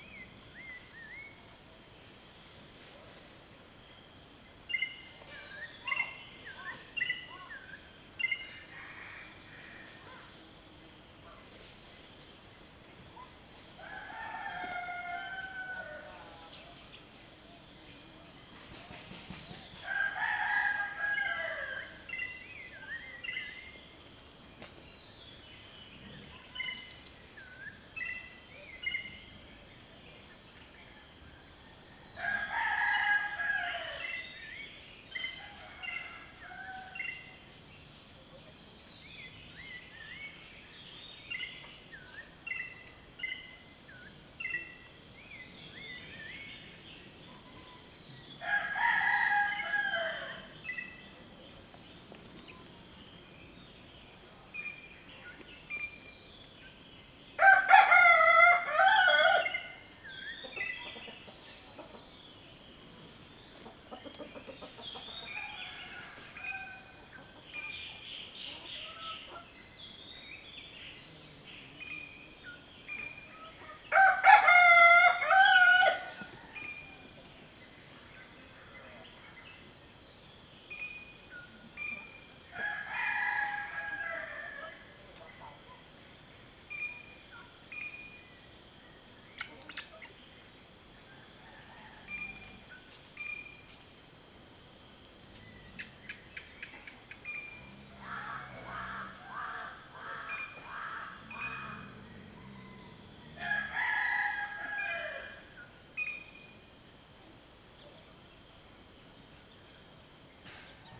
Unnamed Road, Chini, Pahang, Maleisië - dawn lake chini
dawn at lake chini. we stayed in a simple hut hosted by the unforgettable mister Jones.